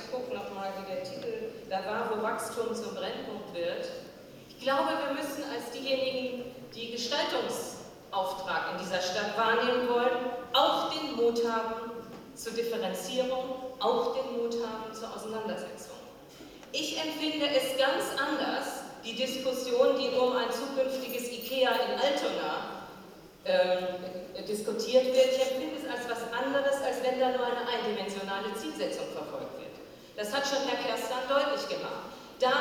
THEMEN DER AKTUELLEN STUNDE
1. Wer gegen wen? Kultur - Kommerz – Stadtentwicklung (GAL)
2. Schwarz-grüne Haushaltsakrobatik - statt klarer Informationen planloser
Aktionismus (DIE LINKE)
3. Für ganz Hamburg - stadtverträgliche Entwicklung des Gängeviertels (CDU)
4. Gängeviertel - Stadtentwicklungspolitik nach dem Motto „Alles muss raus“ (SPD)